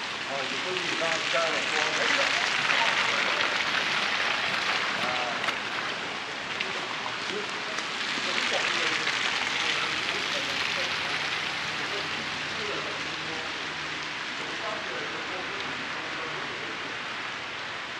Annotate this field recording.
Recording of a popular Montreal Old Port Street, Saint-Paul, pedestrians are walking through the snowy sidewalks and passing vehicles.